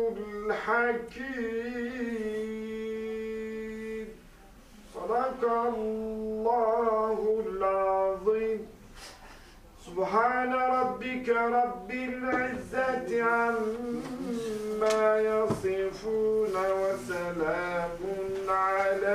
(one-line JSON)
{"title": "Trauerfeier/Moarning ceremony, Hamburg, Steilshoop", "latitude": "53.61", "longitude": "10.02", "altitude": "15", "timezone": "GMT+1"}